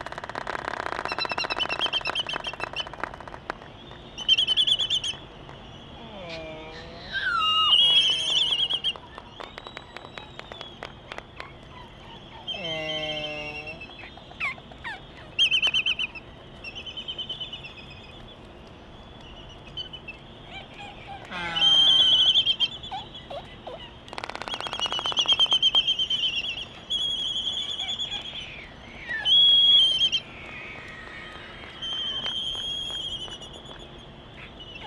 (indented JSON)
{
  "title": "United States Minor Outlying Islands - Laysan albatross dancing ...",
  "date": "1997-12-27 10:25:00",
  "description": "Laysan albatross dancing ... Sand Island ... Midway Atoll ... calls and bill clapperings ... open Sony ECM 595 one point stereo mic to Sony Minidisk ... warm ... sunny ... blustery morning ...",
  "latitude": "28.22",
  "longitude": "-177.38",
  "altitude": "14",
  "timezone": "Pacific/Midway"
}